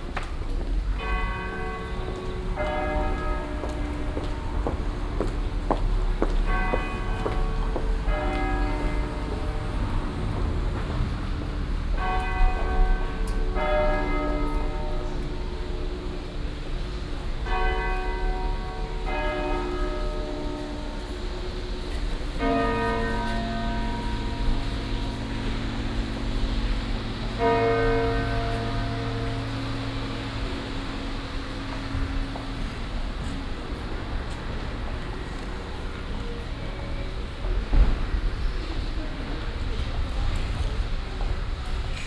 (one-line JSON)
{"title": "cologne, mittelstrasse, mittags", "date": "2008-06-04 12:22:00", "description": "soundmap: köln/ nrw\nmittelstrasse, mittags, schritte, verkehr, am ende die glocken der apostelnkirche\nproject: social ambiences/ listen to the people - in & outdoor nearfield recordings", "latitude": "50.94", "longitude": "6.94", "altitude": "58", "timezone": "Europe/Berlin"}